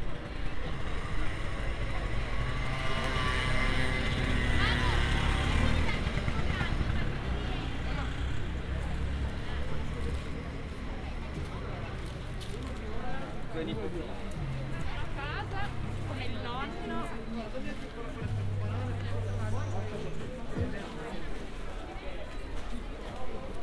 Ascolto il tuo cuore, città. I listen to your heart, city. Several chapters **SCROLL DOWN FOR ALL RECORDINGS** - Round Noon bells on November 7th, Saturday in the time of COVID19 Soundwalk
"Round Noon bells on November 7th, Saturday in the time of COVID19" Soundwalk
Chapter CXXXIX of Ascolto il tuo cuore, città. I listen to your heart, city
Saturday, November 7th, 2020, San Salvario district Turin, walking to Corso Vittorio Emanuele II and back, crossing Piazza Madama Cristina market; first day of new restrictive disposition due to the epidemic of COVID19.
Start at 11:50 a.m. end at 00:17 p.m. duration of recording 27’19”
The entire path is associated with a synchronized GPS track recorded in the (kmz, kml, gpx) files downloadable here:
7 November 2020, ~12:00